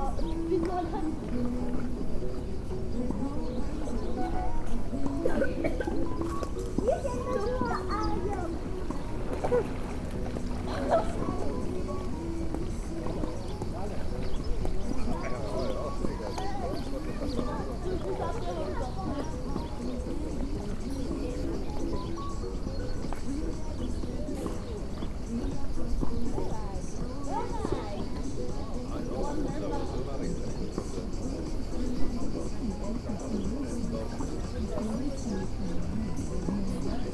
Bosco, Perugia, Italien - relais s. clemente
relais s. clemente
Perugia PG, Italy, 15 July 2015